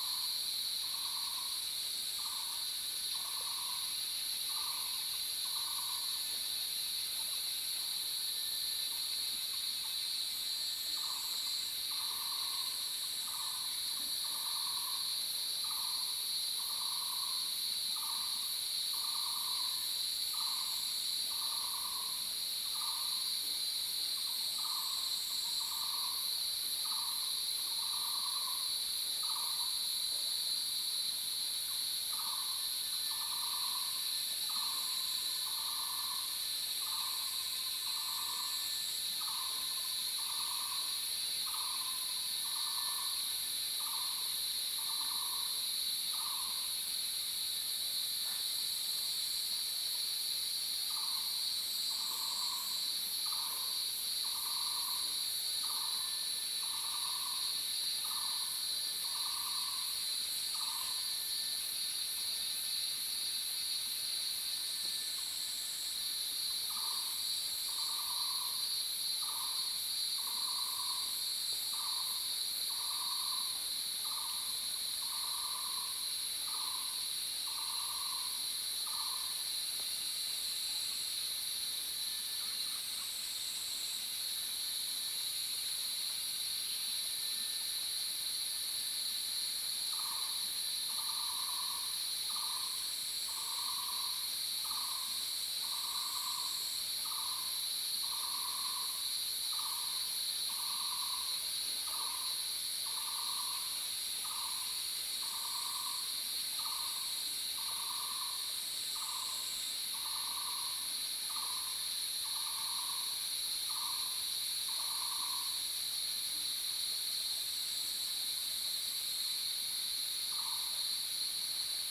華龍巷, Yuchi Township, Nantou County - In the morning
Cicada sounds, Bird sounds, In the morning
Zoom H2n MS+XY